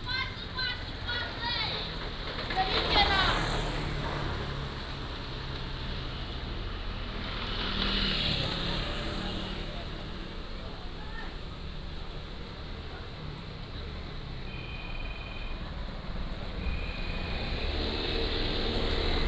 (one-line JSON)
{"title": "椰油村, Koto island - In front of the restaurant", "date": "2014-10-28 17:35:00", "description": "In front of the restaurant, Small tribes, Traffic Sound", "latitude": "22.05", "longitude": "121.51", "altitude": "19", "timezone": "Asia/Taipei"}